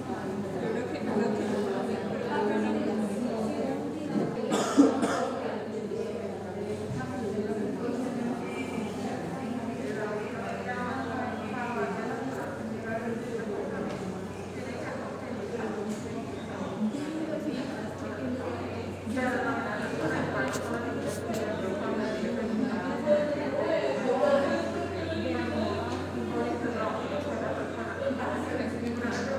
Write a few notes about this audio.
Sonido ambiente en el piso 2 del bloque 10 de la Universidad de Medellín en la Facultad de Comunicación, se escuchan voces, pasos, sillas moviéndose y un hombre tosiendo. También se escuchaban los estudiantes dirigiéndose a sus salones para las clases de las 10 am. Coordenadas: 6°13'55.8"N 75°36'43.3"W, Sonido tónico: voces hablando. Señales sonoras: tos de un hombre, silla arrastrada y risas, Grabado a la altura de 1.60 metros, Tiempo de audio: 4 minutos con 4 segundos. Grabado por Stiven López, Isabel Mendoza, Juan José González y Manuela Gallego con micrófono de celular estéreo.